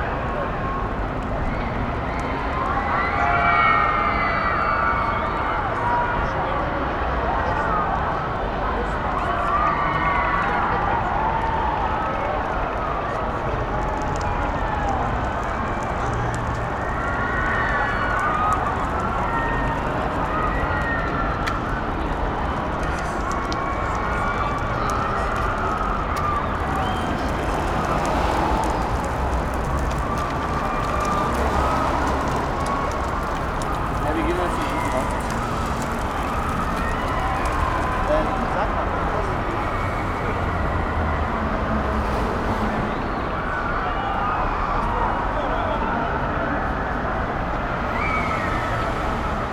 Berlin Schillingstr. - distant christmas fun fair
sound (mostly shouting girls) of the nearby christmas fun fair between tall houses at schillingstr.
Berlin, Deutschland, 11 December 2011, 18:00